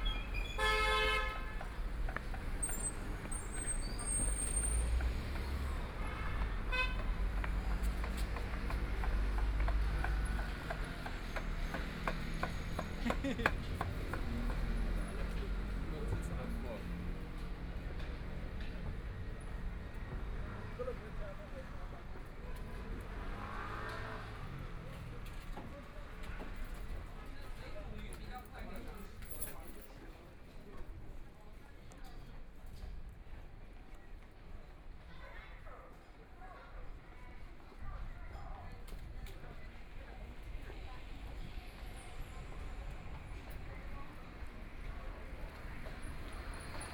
Ji'nan Road, Shanghai - Walking on the street
Walking on the street, About to be completely demolished the old community, Binaural recordings, Zoom H6+ Soundman OKM II